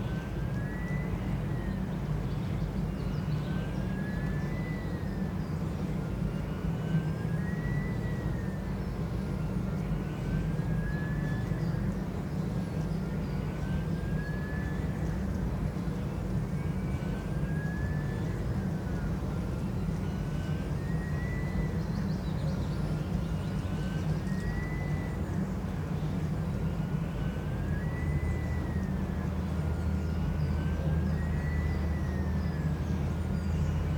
near Allrath, Germany - Whistling windgenerator
Wind generators are a green face of energy production in this area otherwise dominated by huge opencast brown coal mines and associated power stations. All are owned by the company RWE AG, one of the big five European energy companies. Each wind generator has different sound. This one has a characteristic whistle the acoustics of which are weird. The sound can only be heard in certain spots, not necessarily those closest to the turbine.